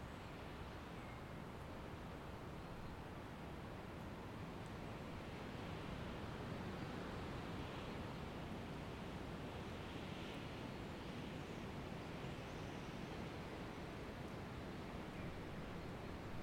Rinckenhof, Zweibrücken, Deutschland - Sunday Morning
metalabor Wintercamp auf dem Rinckenhof / Zweibrücken
Rheinland-Pfalz, Deutschland, 23 February